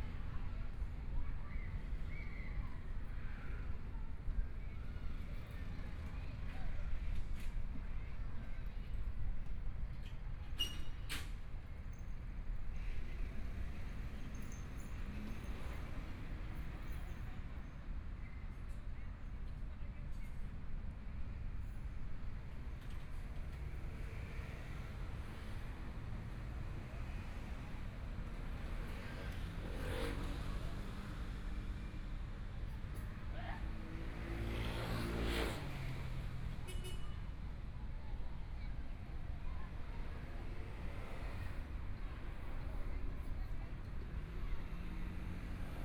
榮星公園, Taipei - Entrance to the park
Entrance to the park, Traffic Sound, the sound of the Kids playing game, Being compiled and ready to break the market, Binaural recordings, Zoom H4n+ Soundman OKM II
Taipei City, Taiwan